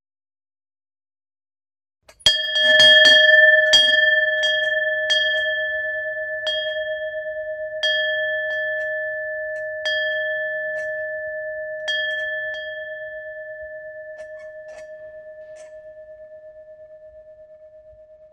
mettmann, st.lambertuskirche, glocken - mettmann, st.lambertus kirche, glocken
collage verschiedener glocken in der st lambertuskirche, kirchglocjke bespielt mit filzklöppel
soundmap nrw:
social ambiences/ listen to the people - in & outdoor nearfield recordings